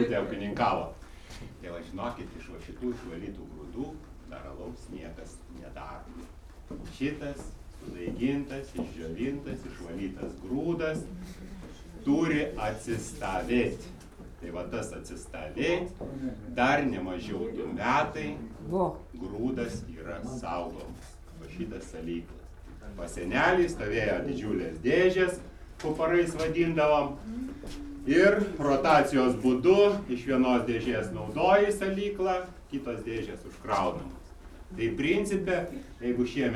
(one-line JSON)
{"title": "Lithuania, Dusetos, talk about beer making", "date": "2011-05-16 15:30:00", "description": "Brewer R. Cizas speaks about bear making process", "latitude": "55.76", "longitude": "25.84", "altitude": "105", "timezone": "Europe/Vilnius"}